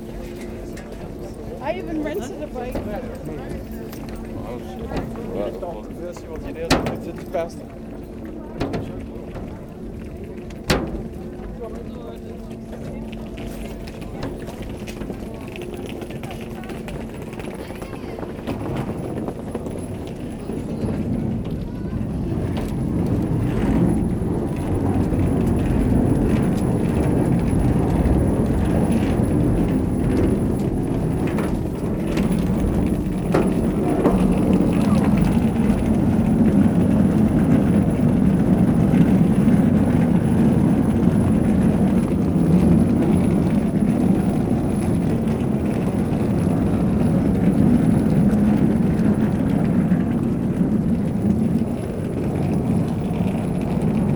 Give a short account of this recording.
A long quiet walk on the Sint-Servaas bridge. At the beginning, I'm walking along the hundred bikes. After, as three barges are passing on the Maas river, the bridge is elevating. Bikes must drive on a narrow metallic footbridge. Boats passes, two are producing big engine noise, it's the third time I spot the Puccini from Remich, Luxemburg. When the bridge descends back, the barrier produces a specific sound to Maastricht.